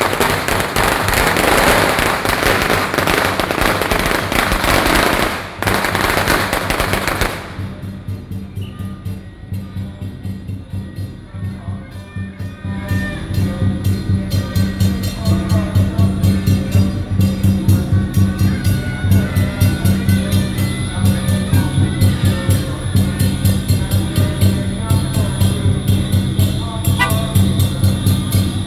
集應廟停車場, Wenshan District, Taipei City - SoundMap20121128-2
November 2012